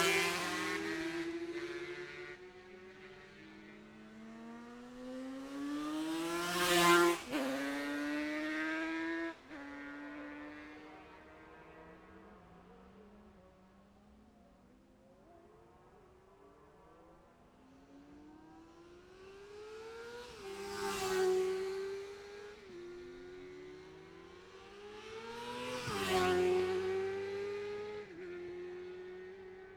Jacksons Ln, Scarborough, UK - Gold Cup 2020 ...
Gold Cup 2020 ... sidecars practice ... Memorial Out ... dpa 4060s to Zoom H5 clipped to bag ...
11 September 2020, 10:50